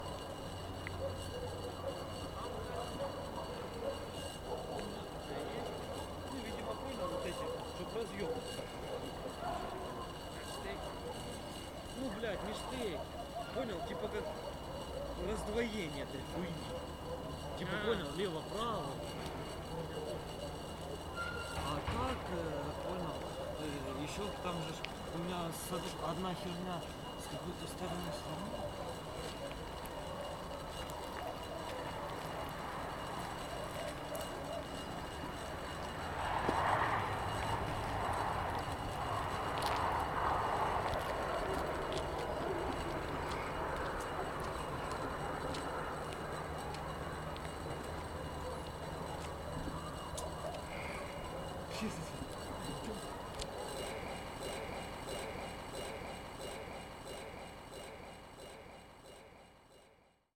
вулиця Торецька, Костянтинівка, Донецька область, Украина - Шум проводов и мат на вечерней улице
Пустая дорога, руины и пустыри. Гудят провода и голоса идущих с работы людей. Оживленный разговор и мат.